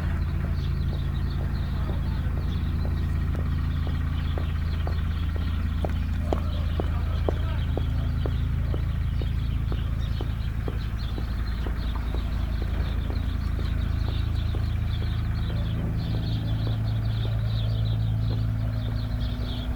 Sucharskiego, Szczecin, Poland
Sounds from three working excavators.